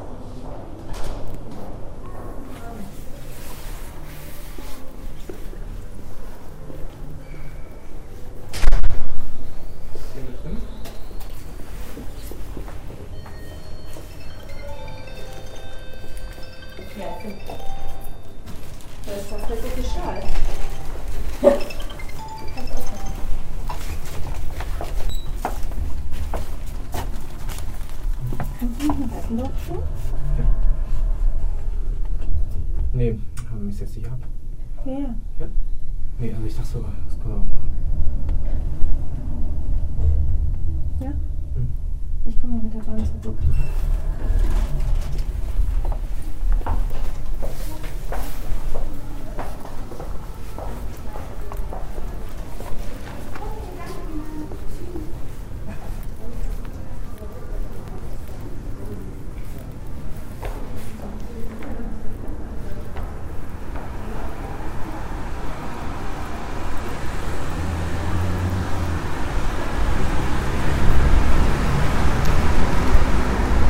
Aus der Serie "Immobilien & Verbrechen". Gedämpfte Atmosphäre im Luxushotel: der diskrete Sound der Bourgeoisie.
Keywords: Gentrifizierung, St. Pauli, Brauereiquartier
Hamburg, Germany, 31 October 2009